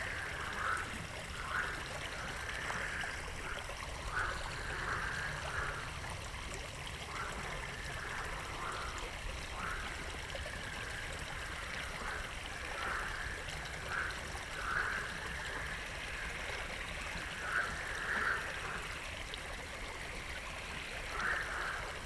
Beselich Niedertiefenbach - night ambience with frogs
frog concert at night, within the village, quite unusual, never heard this here before.
(Sony PCM D50, DPA4060)
Beselich, Germany, 15 May